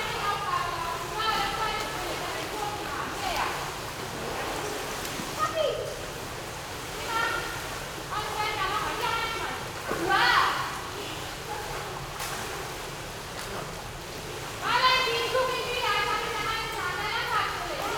Kreyenbrück, Oldenburg, Deutschland - swimming hall ambience
swimming hall ambience, training course for school kids
(Sony PCM D50)
Oldenburg, Germany, 14 March 2015